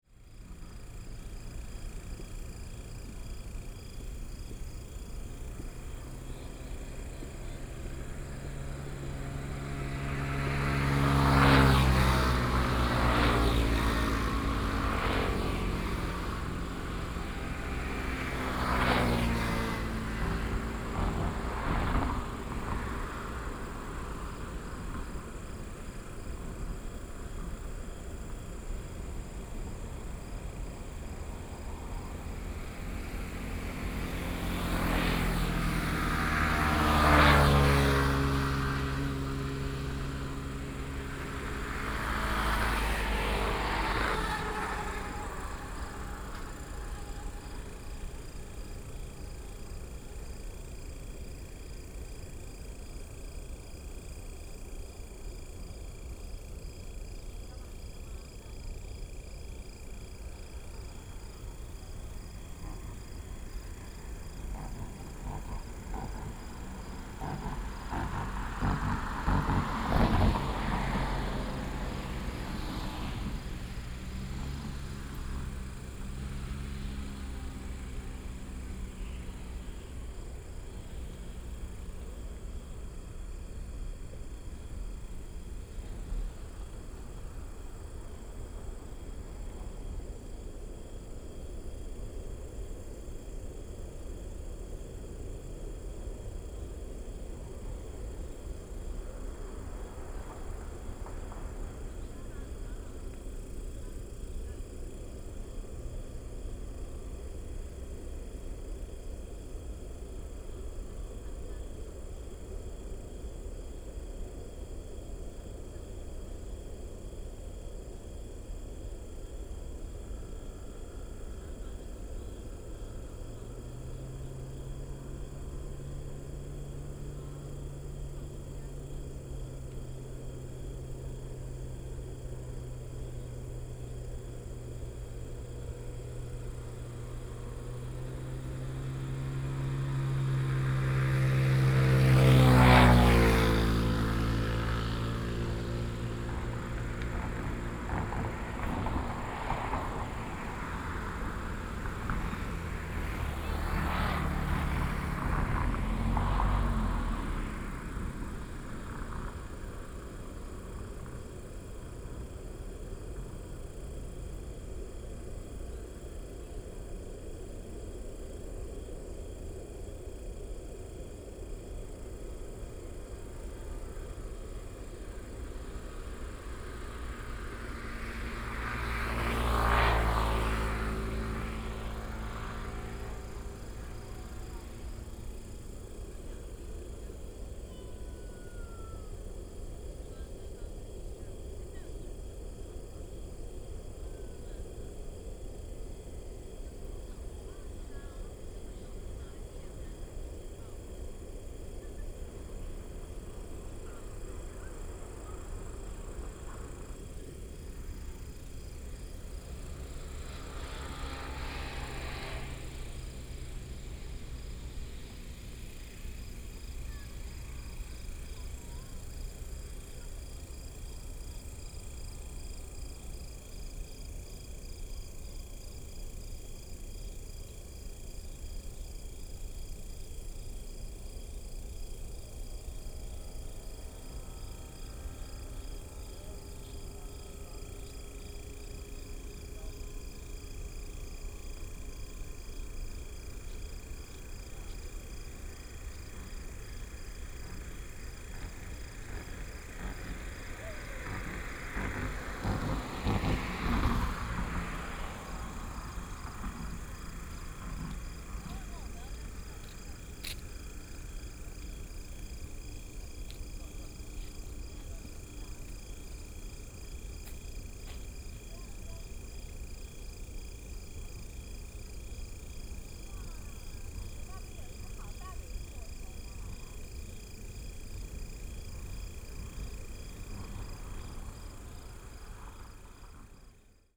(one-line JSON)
{"title": "台中都會公園, Taichung City - city ambient", "date": "2017-10-09 19:47:00", "description": "Insects sound, Traffic sound, City environment sound, Firecrackers and fireworks, Binaural recordings, Sony PCM D100+ Soundman OKM II", "latitude": "24.21", "longitude": "120.60", "altitude": "296", "timezone": "Asia/Taipei"}